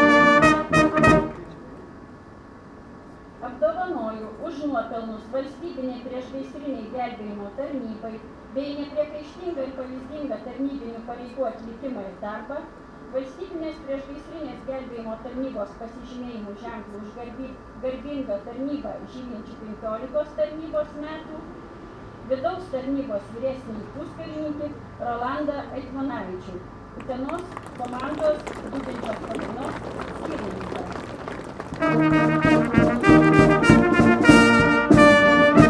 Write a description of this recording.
honouring firemen on Firefighter's Day